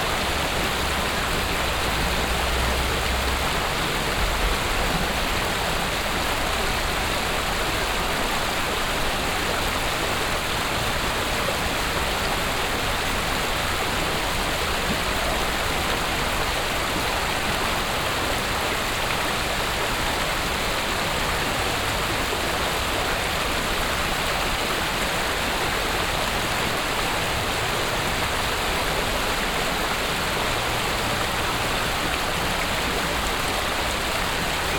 essen, kettwiger street, fountain
Am Essener Dom. Eine längere kaskadierende Wassrfontäne, deren Klang ein wenig die Musik eines naheliegenden Restaurants überdecken kann.
A long, stairway like water fountain hiding a little the music from a nearby restaurant.
Projekt - Stadtklang//: Hörorte - topographic field recordings and social ambiences